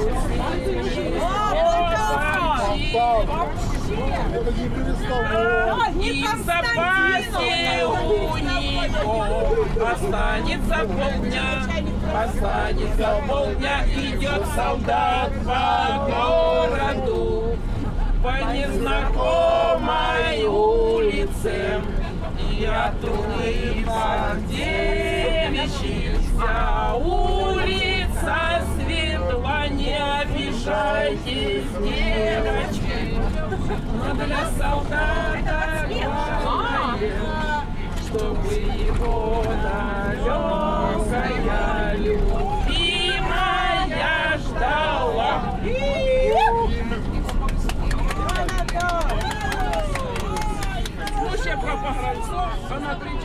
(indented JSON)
{
  "title": "Донецька область, Украина - Шум дороги, разговоры и пение в автобусе",
  "date": "2019-01-20 14:54:00",
  "description": "Ночная поездка, беседы пассажиров и любительское пение",
  "latitude": "47.99",
  "longitude": "36.96",
  "altitude": "108",
  "timezone": "GMT+1"
}